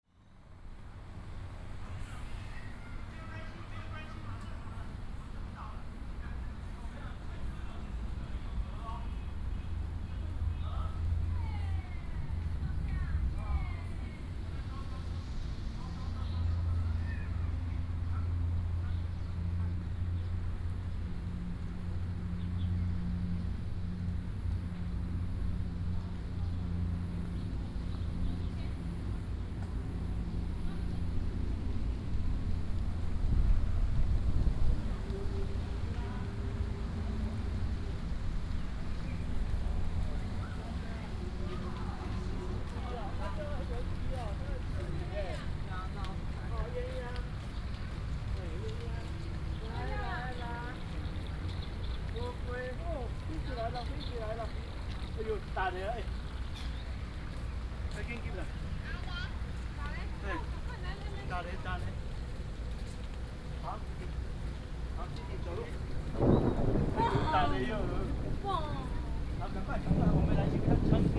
{"title": "八德埤塘自然生態公園, Taoyuan City - in the Park", "date": "2017-07-04 15:22:00", "description": "in the Park, Thunder, Traffic sound, Tourists", "latitude": "24.94", "longitude": "121.31", "altitude": "134", "timezone": "Asia/Taipei"}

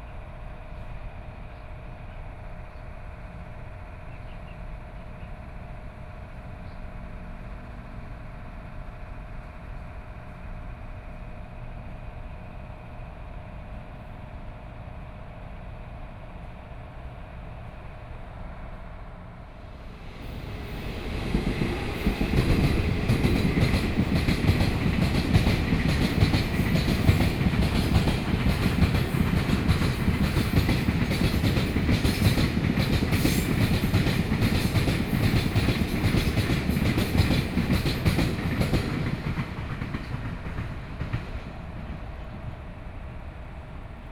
{"title": "羅東林業文化園區, Yilan County - in the Park", "date": "2014-07-28 09:21:00", "description": "In the park, Air conditioning noise, Trains traveling through, Traffic Sound", "latitude": "24.68", "longitude": "121.77", "altitude": "10", "timezone": "Asia/Taipei"}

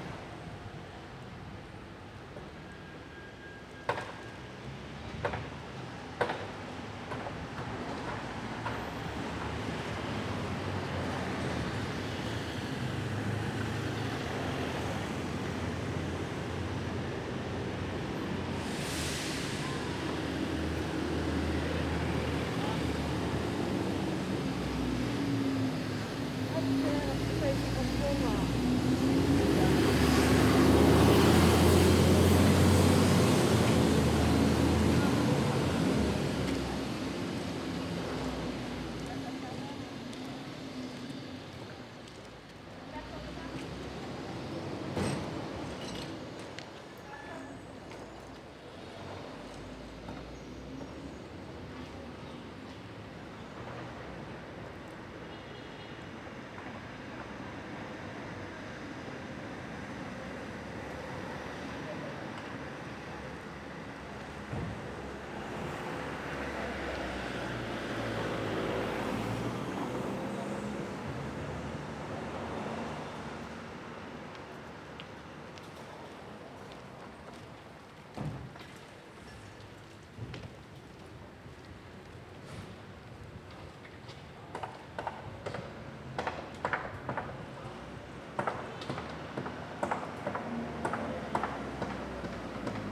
{"title": "Vauban, Marseille, Frankreich - Marseille, Boulevard Vauban on the corner of Rue de la Guadeloupe - Street setting, building site, church bell", "date": "2014-08-12 14:55:00", "description": "Marseille, Boulevard Vauban on the corner of Rue de la Guadeloupe - Street setting, building site, church bell.\n[Hi-MD-recorder Sony MZ-NH900, Beyerdynamic MCE 82]", "latitude": "43.28", "longitude": "5.37", "altitude": "89", "timezone": "Europe/Paris"}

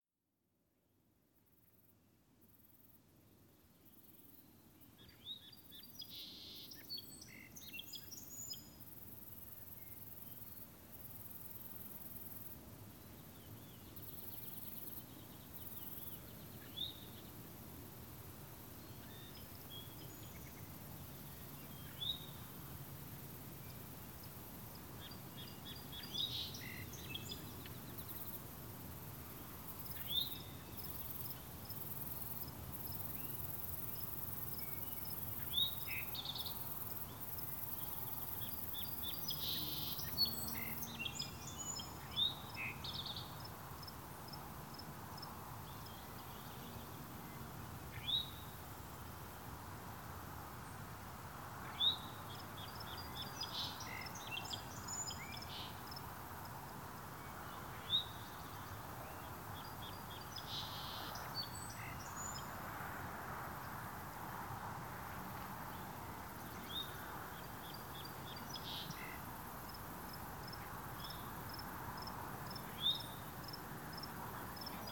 {"title": "Markey Township, MI, USA - Houghton Lake Field Crickets", "date": "2014-07-18 17:05:00", "description": "Hot summer afternoon beside on a country road near Houghton Lake, Michigan. A few variety of what I call field crickets arise from the surrounding fields, joining a couple of singing birds further into the woods. A tiny bit of road noise from about 3/4 of a mile away, otherwise calm day and little interference. Just one take from a Tascam Dr-07, only edits are fade in/out and gain increase.", "latitude": "44.40", "longitude": "-84.72", "altitude": "350", "timezone": "America/Detroit"}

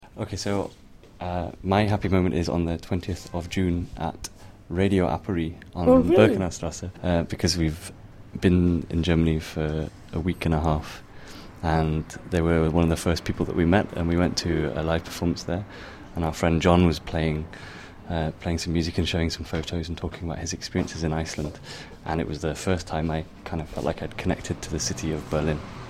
{"title": "radio aporee - mapping happiness radio aporee", "latitude": "52.49", "longitude": "13.42", "altitude": "45", "timezone": "GMT+1"}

